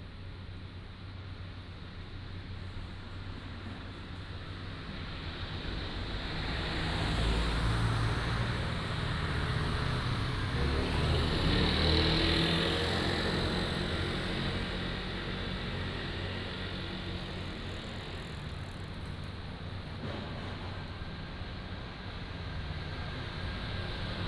The town in the morning, Morning streets, Traffic Sound, In front of the convenience store
9 October 2014, 06:16